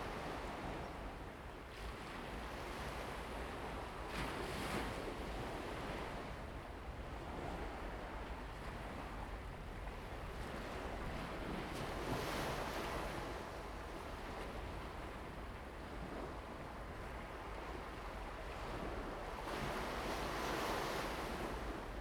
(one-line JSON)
{"title": "湖下海堤, Jinning Township - In mentioning the shore", "date": "2014-11-03 06:52:00", "description": "In mentioning the shore, sound of the waves, Crowing sound\nZoom H2n MS+XY", "latitude": "24.46", "longitude": "118.30", "altitude": "4", "timezone": "Asia/Taipei"}